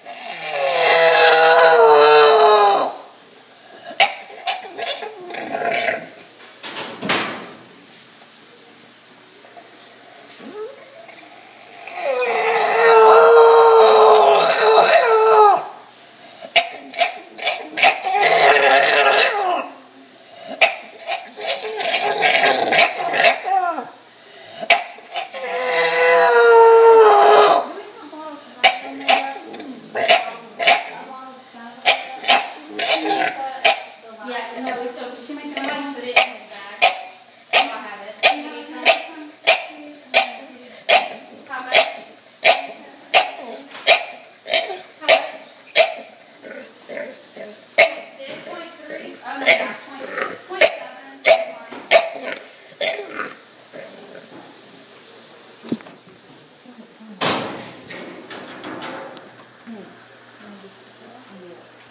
Unearthly Sounds from a Workplace, Houston, Texas - Distressed!
My wife recorded this on an Iphone 4s while working at her vet clinic. The sounds are that of an English Bulldog immediately after waking from a surgery performed to at least partially relieve a condition common to these dogs that constricts their airways. He was scared, on morphine and just had a breathing tube removed from his throat. The surgery was a success, although he will likely sound freakishly awful at all times for his entire life, as most bulldogs do.